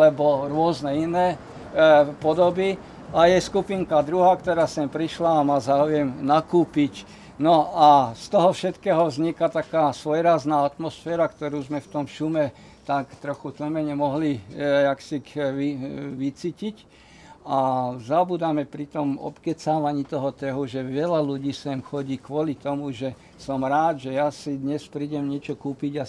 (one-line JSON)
{"title": "Trhovisko Zilinska", "date": "2014-06-13 20:32:00", "description": "Unedited recording of a talk about local neighbourhood.", "latitude": "48.16", "longitude": "17.11", "altitude": "154", "timezone": "Europe/Bratislava"}